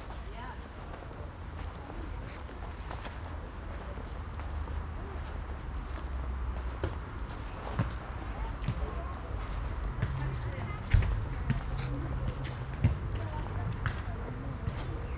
sanctuary for lizards, Alt-Treptow, Berlin - walk in wasteland
the prolongation of the old train embarkment is now fenced and declard as a sanctuary for lizards. walk through the area, summer evening, no lizards around.
(Sony PCM D50, DPA4060)